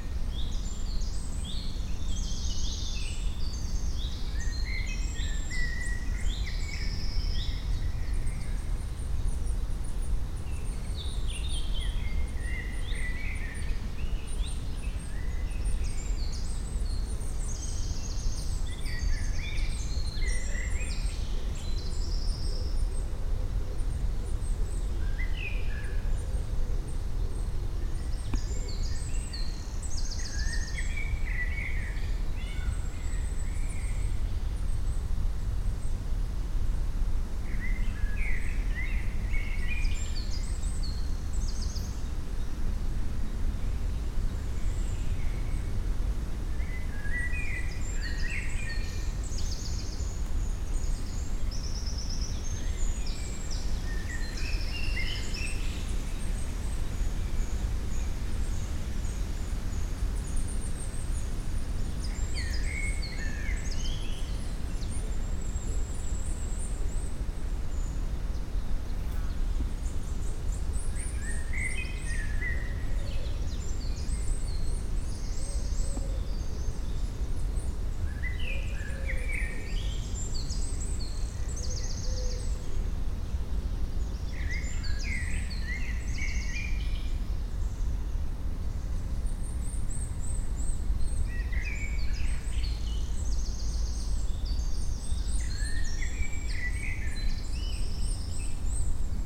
Court-St.-Étienne, Belgique - The forest
Very quiet ambience in the forest. Wind in the trees, birds, silence.